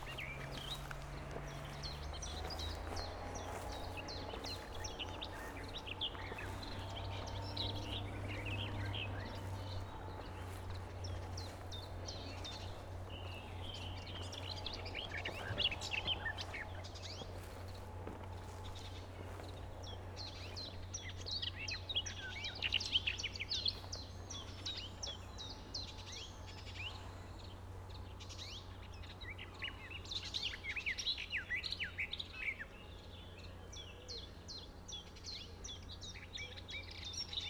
walking around the lock at river Havel and nearby areas, listening to birds
(Sony PCM D50, Primo EM172)